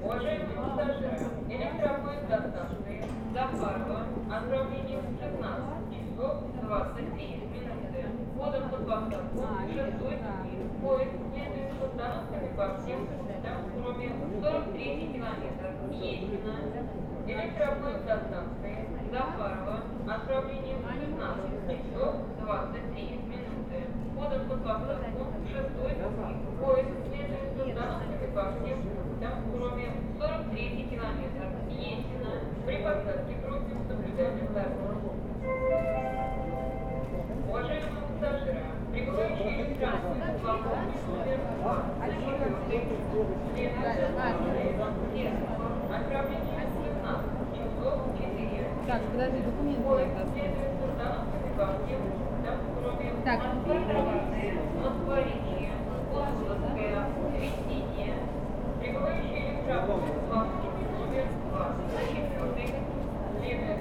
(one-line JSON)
{"title": "Басманный р-н, Москва, Россия - Kursk Station", "date": "2016-06-14 16:25:00", "description": "Before the departure of the train", "latitude": "55.76", "longitude": "37.66", "altitude": "141", "timezone": "Europe/Moscow"}